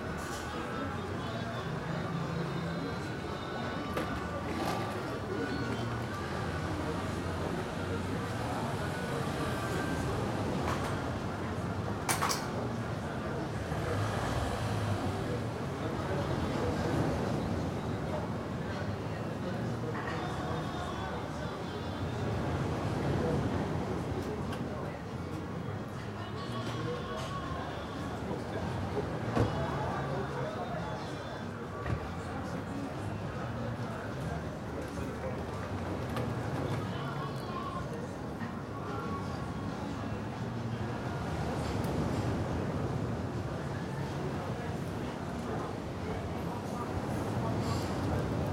Spain, Cadaqués, Passeig - Passeig
This recording is some acoustic hybrid of a kind i like very much. On the left: the lively background noise of a restaurant. On the right: much less obstrusive sounds of a movie on TV from a flat. In front: arising from time to time, the backwash of waves on the beach, the deep presence of nature.